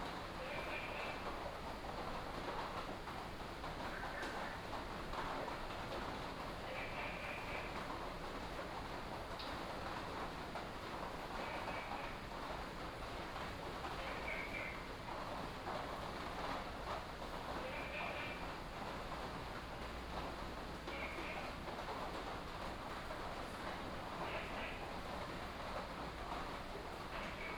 Woody House, 埔里鎮桃米里 - Rainy Day
Rainy Day, Frog chirping, Inside the restaurant
Nantou County, Taiwan, 26 August 2015